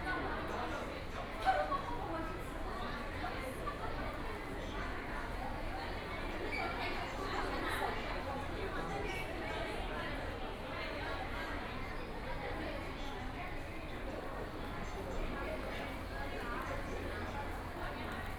Tiantong Road Station, Shanghai - Toward the subway station
From shopping malls to metro station, The sound of the crowd, Station broadcast messages, Binaural recording, Zoom H6+ Soundman OKM II